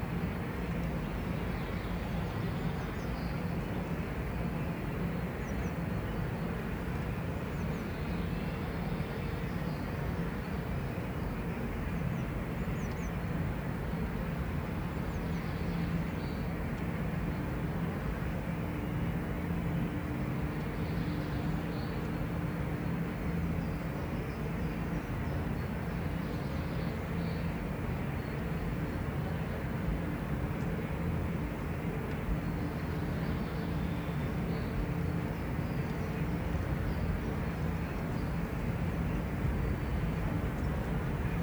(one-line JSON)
{"title": "near Allrath, Germany - Pure air movements from the windgenerators", "date": "2012-04-04 13:35:00", "latitude": "51.06", "longitude": "6.62", "altitude": "155", "timezone": "Europe/Berlin"}